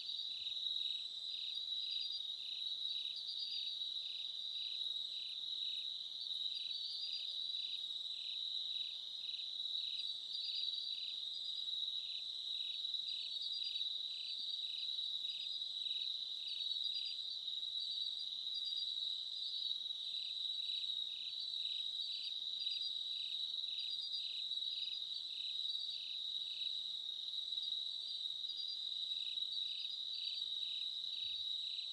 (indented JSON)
{
  "title": "Unnamed Road, Haru, Kikuchi, Kumamoto, Japan - Mt. Aso Autumn Crickets",
  "date": "2019-10-12",
  "description": "Autumn crickets heard while camping in a forrest.",
  "latitude": "32.97",
  "longitude": "130.94",
  "altitude": "853",
  "timezone": "Asia/Tokyo"
}